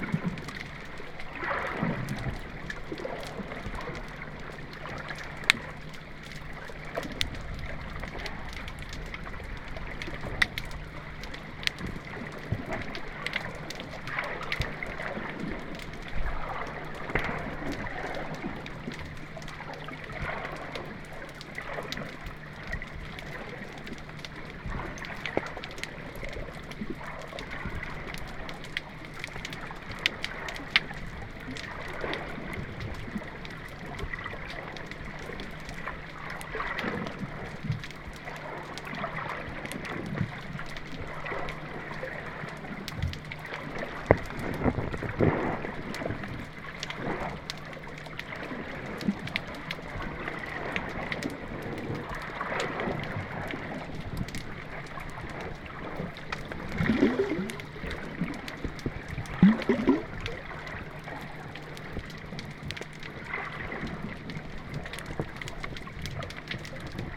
Recording with composer Elias Anstasiou
Περιφέρεια Ιονίων Νήσων, Αποκεντρωμένη Διοίκηση Πε, 18 January 2022